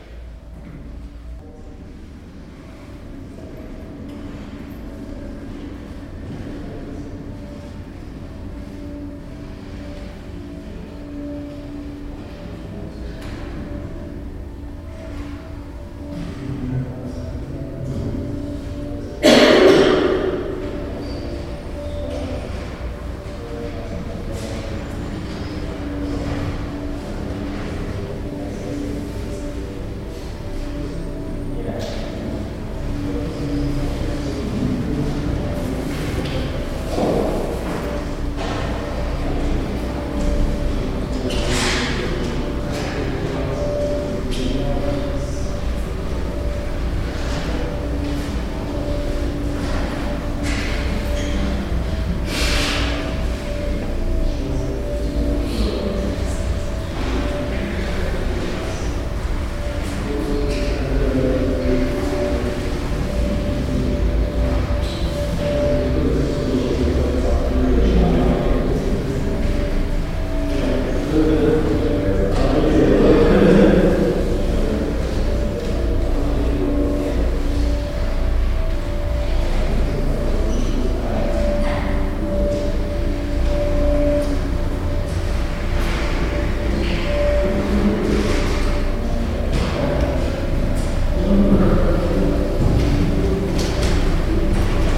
Church Matky Bozi pred Tynem
Walking the corridor from Oldtown square in noon direction the church. Tycho de Brahe came back from the trip recently.
Prague 5-Old Town, Czech Republic, 7 January 2011